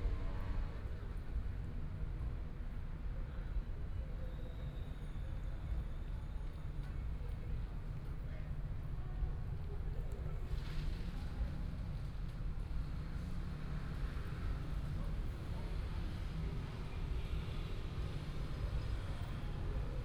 Evening in the park, Binaural recordings, Zoom H4n+ Soundman OKM II